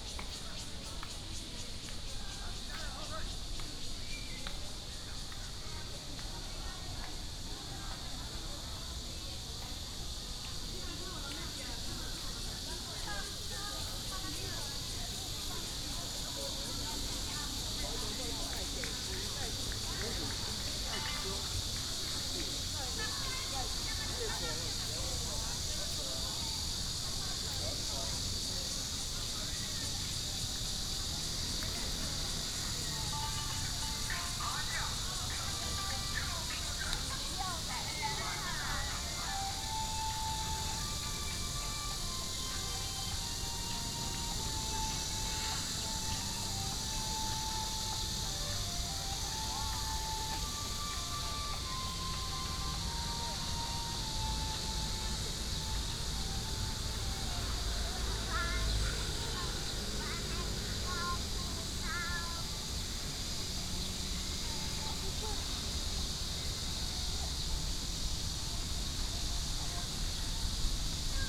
延平公園, Taoyuan Dist. - walking in the Park
walking in the Park, Cicada cry, traffic sound